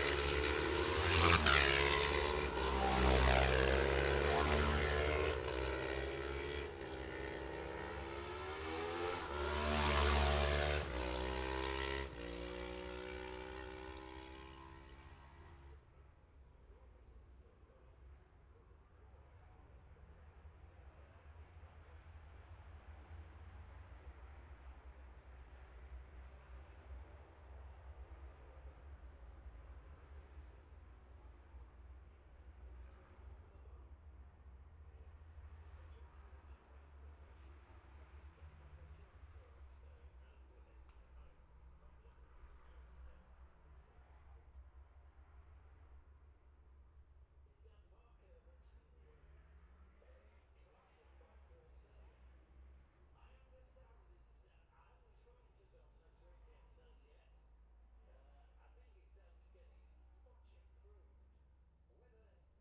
Jacksons Ln, Scarborough, UK - olivers mount road racing ... 2021 ...
bob smith spring cup ... twins group A practice ... dpa 4060s to MixPre3 ...